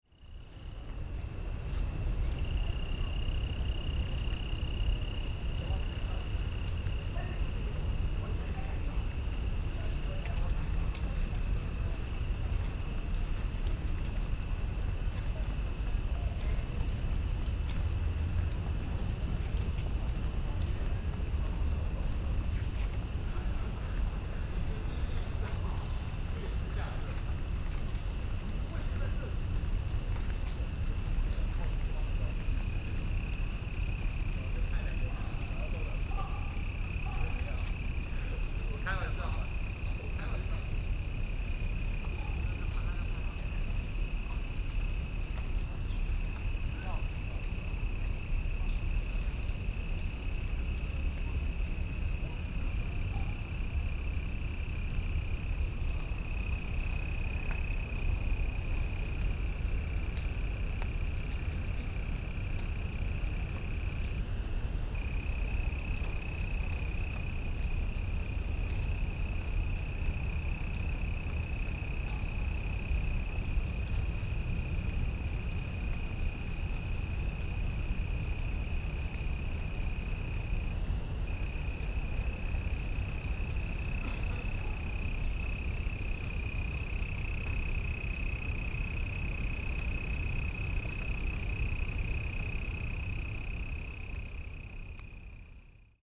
Taipei Botanical Garden, Taiwan - In the Park
in the park, Sony PCM D50 + Soundman OKM II